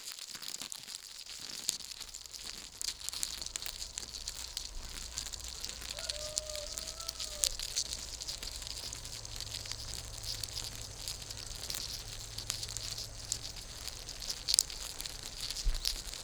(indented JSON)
{
  "title": "개미 집 Large black ants nest",
  "date": "2020-09-13 10:00:00",
  "description": "개미 집_Large black ants nest\n(No ants nor humans were killed or injured during the making of this recording!)",
  "latitude": "37.94",
  "longitude": "127.66",
  "altitude": "175",
  "timezone": "Asia/Seoul"
}